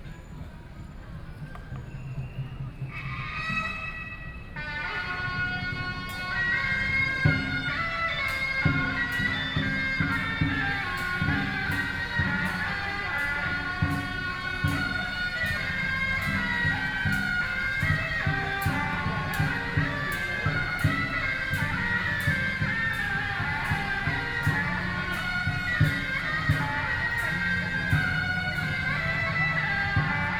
National Taiwan Museum, Taipei - temple festivals
Traditional temple festivals, Through a variety of traditional performing teams, Gods into the ceremony venue, Binaural recordings, Zoom H6+ Soundman OKM II
Zhongzheng District, 博物館, November 2013